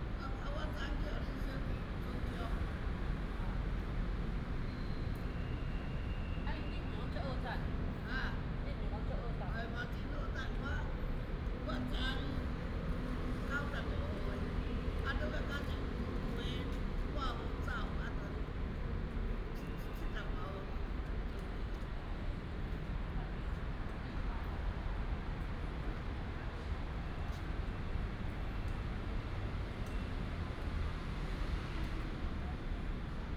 和平公園, 空軍十四村 Hsinchu City - in the Park
in the Park, traffic sound, Birds sound, Old community after demolition into a park, Binaural recordings, Sony PCM D100+ Soundman OKM II
21 September 2017, 07:47